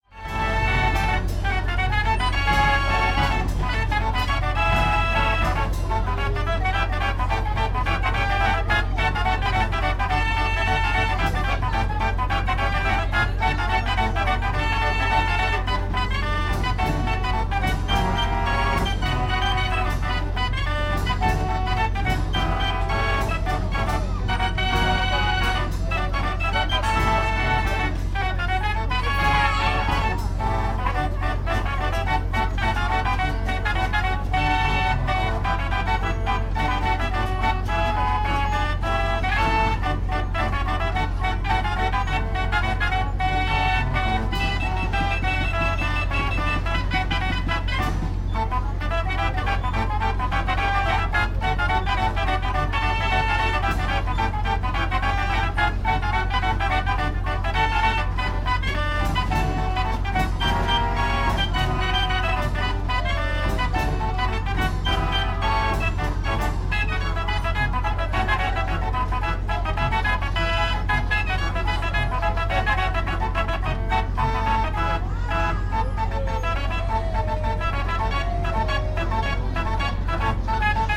Carter's Steam Fair, Palmer Park, Reading, UK - The organ on the carousel at Carter's Steam Fair
A chance encounter with an old fashioned fair on Palmer Park. Art Deco arcade machines, a creaky old Waltzer, and an old fairground organ belting out tunes on the carousel. Stood and listened in the sunshine until Mark got bored of waiting! I love the sound, it reminds me of being very young and going to the Beamish steam fair with my parents and grandparents.
Wokingham, UK